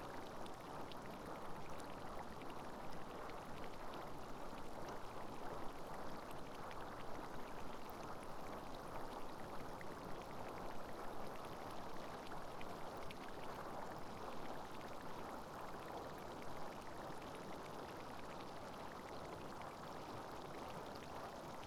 Caldara di Manziana - Bigger spurt
The biggest spurt in the background produces a lot of whiffs and splashes. Close to the recorder the mud produces a lot of tiny bubbles.
The audio has been cropped to eliminate plane's noises from the near airport.
No other modifications has been done.
TASCAM DR100 MKII
Manziana RM, Italy, 8 October